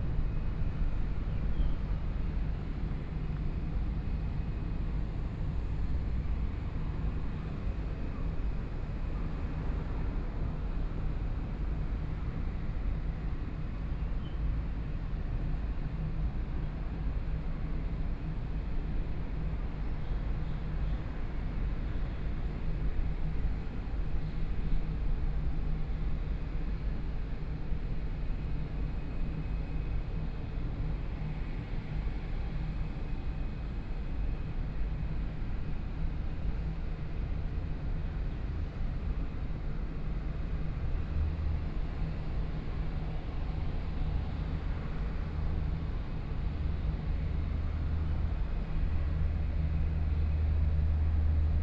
{
  "title": "高雄國際航空站 (KHH), Taiwan - Environmental sounds",
  "date": "2014-05-14 08:57:00",
  "description": "Environmental sounds, Airports near ambient sound",
  "latitude": "22.57",
  "longitude": "120.34",
  "altitude": "7",
  "timezone": "Asia/Taipei"
}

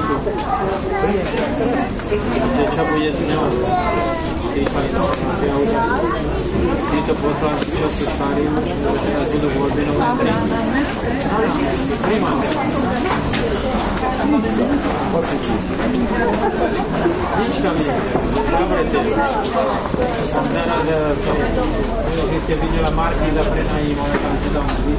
{
  "title": "bratislava, market at zilinska street - market atmosphere III",
  "date": "2010-09-03 10:25:00",
  "latitude": "48.16",
  "longitude": "17.11",
  "timezone": "Europe/Berlin"
}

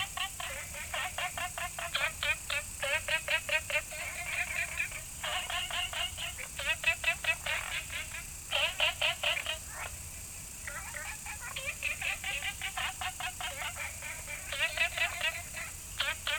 {
  "title": "青蛙阿婆家, Taomi Ln., Puli Township - Frogs and Insects called",
  "date": "2015-09-03 20:37:00",
  "description": "Frogs chirping, Insects called, Small ecological pool",
  "latitude": "23.94",
  "longitude": "120.94",
  "altitude": "463",
  "timezone": "Asia/Taipei"
}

